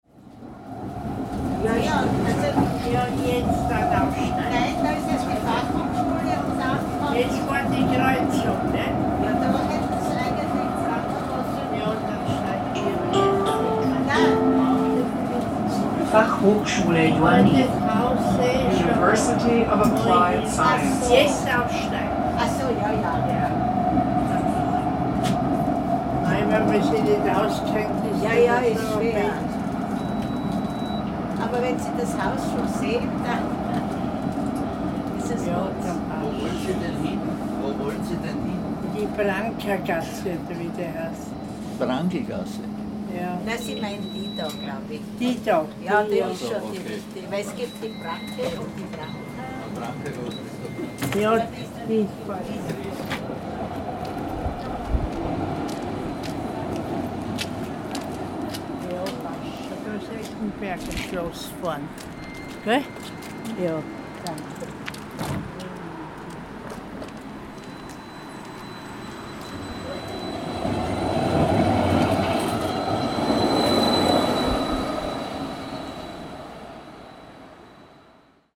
Eggenberger Alle, Graz, Austria - Tram Journey to FH Joanneum, Graz - Bim Journey to FH Joanneum, Graz
Journey through Eggenberg with the so called "Bim", the famous tram line of the City of Graz, Austria. Stopping at the station "FH Joanneum, University of Applied Sciences".